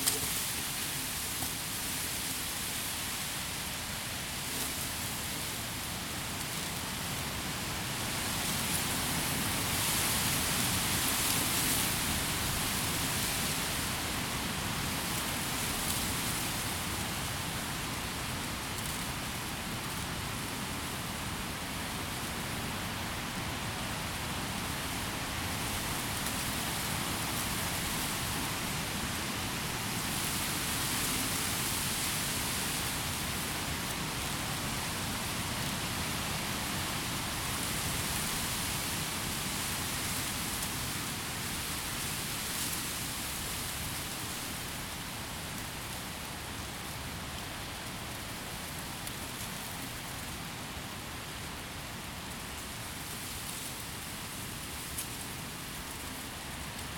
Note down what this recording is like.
Wind gusts through tall dry grass. Distant train horn starts at 1:23.